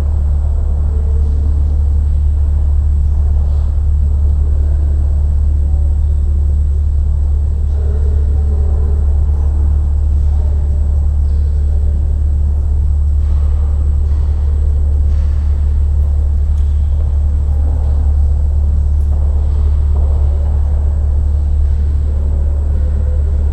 Brussels, Bozar, Air Conditionning
Air conditionning system in a museum room, drone in art..!
City of Brussels, Belgium, November 2011